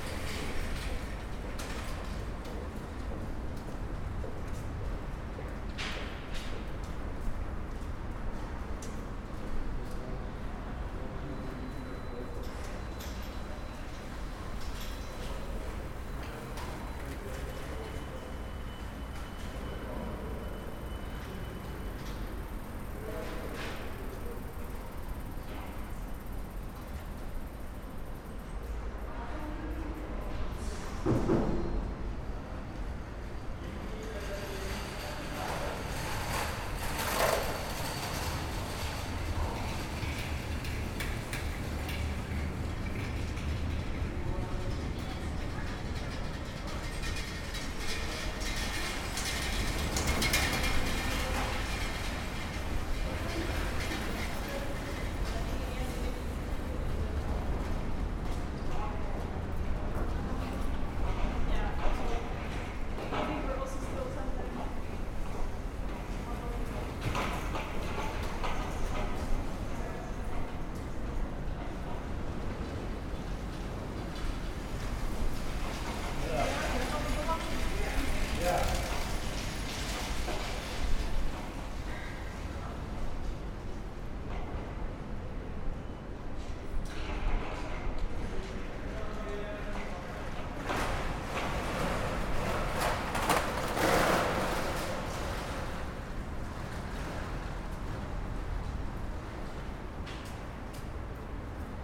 Weesperstraat, Amsterdam, Netherlands - Small Tunnel for bikers and pedestrians near subway entrance
Binaural format with two DPA 4061. Distant buzz coming from overhead traffic and metro tube below. Passing bikers and pedestrians. reflective space.